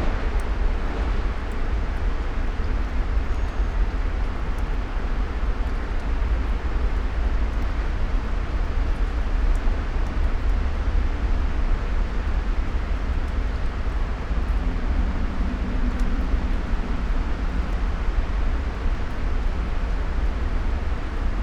{
  "title": "Grünstraßenbrücke, Mitte, Berlin, Germany - rain stops",
  "date": "2015-09-06 14:18:00",
  "description": "river Spree, small corner with steps, two under one umbrella\nSonopoetic paths Berlin",
  "latitude": "52.51",
  "longitude": "13.41",
  "altitude": "40",
  "timezone": "Europe/Berlin"
}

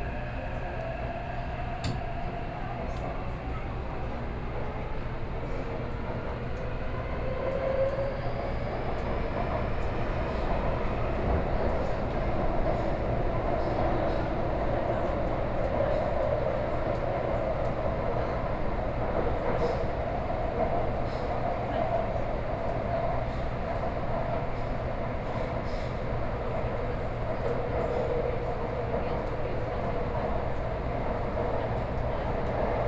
{
  "title": "Songjiang Rd., Taipei City - Orange Line (Taipei Metro)",
  "date": "2013-10-24 20:16:00",
  "description": "from Zhongxiao Xinsheng Station to Minquan West Road station, Binaural recordings, Sony PCM D50 + Soundman OKM II",
  "latitude": "25.06",
  "longitude": "121.53",
  "altitude": "19",
  "timezone": "Asia/Taipei"
}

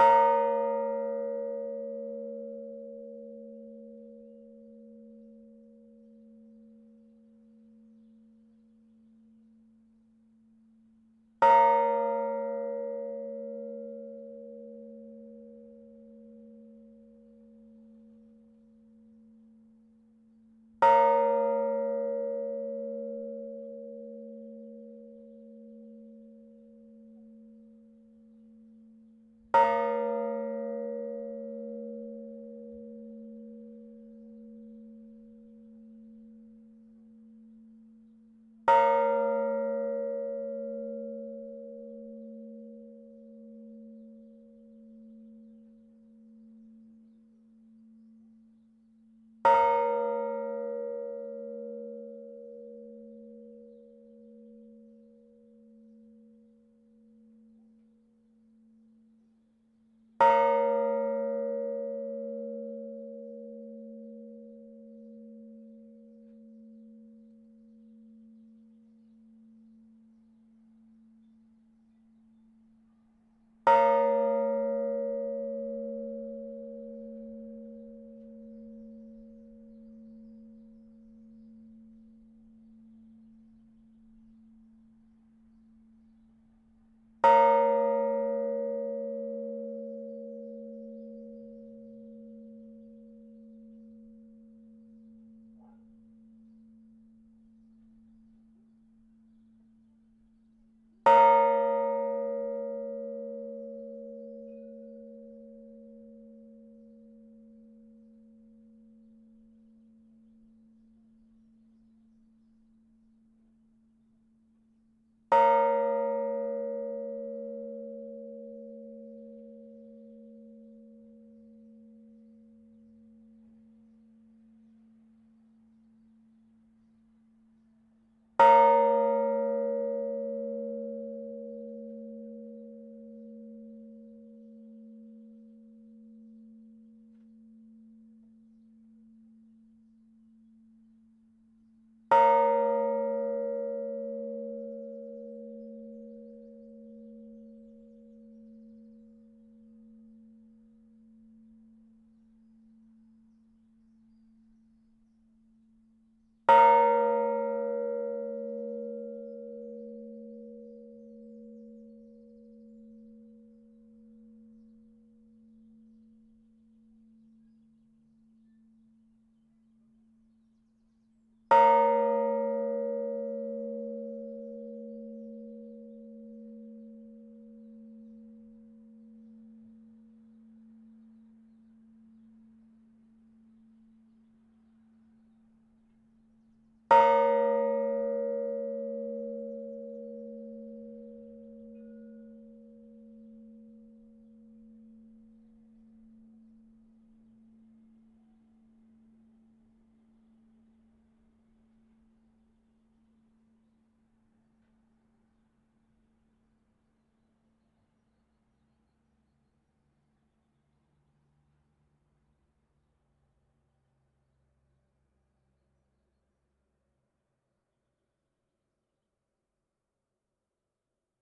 {
  "title": "Escautpont US, Escautpont, France - Escautpont (Nord)- église St-Armand",
  "date": "2021-04-23 10:00:00",
  "description": "Escautpont (Nord)\néglise St-Armand\nTintement cloche grave",
  "latitude": "50.42",
  "longitude": "3.56",
  "altitude": "24",
  "timezone": "Europe/Paris"
}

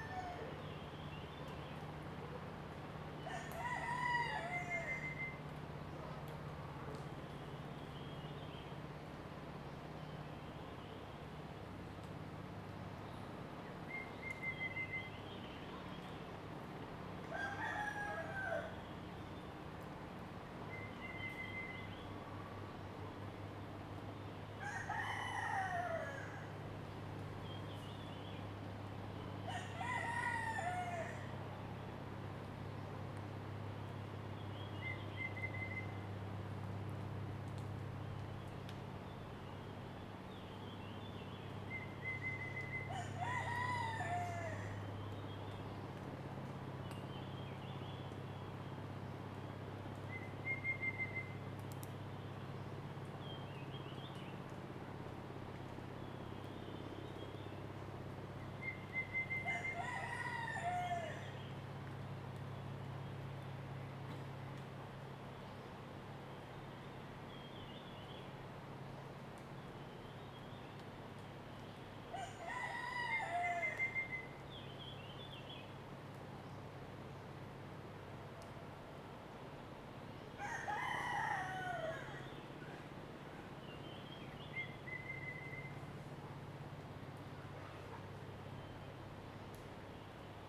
{
  "title": "水上巷, 埔里鎮桃米里 Taiwan - In the morning",
  "date": "2016-03-26 05:55:00",
  "description": "Morning in the mountains, Bird sounds, Traffic Sound, Crowing sounds, Dogs barking\nZoom H2n MS+XY",
  "latitude": "23.94",
  "longitude": "120.92",
  "altitude": "494",
  "timezone": "Asia/Taipei"
}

